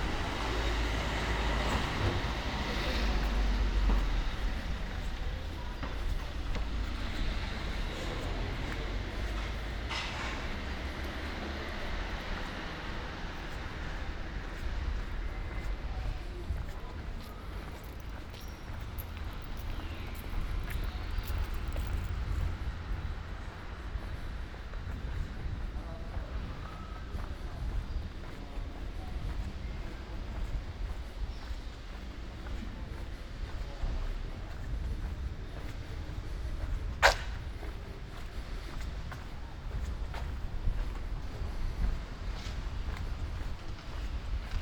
"Valentino Park Friday afternoon summer soundwalk and soundscape 17 (3) months later in the time of COVID19": soundwalk & soundscape
Chapter CLXXXII of Ascolto il tuo cuore, città. I listen to your heart, city
Friday, August 27th, 2021. San Salvario district Turin, to Valentino park and back, long time after emergency disposition due to the epidemic of COVID19.
Start at 3:19 p.m. end at 4:12 p.m. duration of recording 52’51”
Walking to a bench on riverside where I stayed for few minutes.
As binaural recording is suggested headphones listening.
The entire path is associated with a synchronized GPS track recorded in the (kmz, kml, gpx) files downloadable here:
Similar paths:
10-Valentino Park at sunset soundwalk and soundscape
171-Valentino Park at sunset soundwalk and soundscape 14 months later
Piemonte, Italia, 27 August 2021